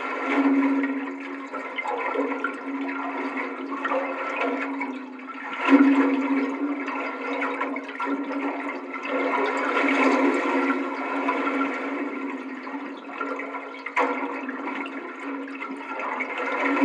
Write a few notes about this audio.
Recorded with a stereo pair of JrF contact mics taped to metal sea stairs into a Sound Devices MixPre-3.